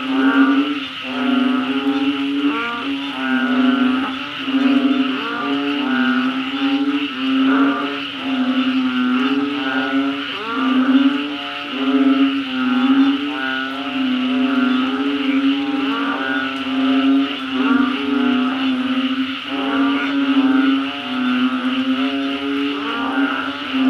Ban Na Tin (Krabi Province) - Toads and Frogs singing during the night
During the night in the small village of Ban Na Tin (Krabi Province), after the rain, toads and frogs are happy and singing.
Recorded by an ORTF Setup Schoeps CCM4x2 in a Cinela Windscreen
Recorder Sound Devices 633
GPS: 8.0651833, 98.8099667
Sound Ref: TH-181015T03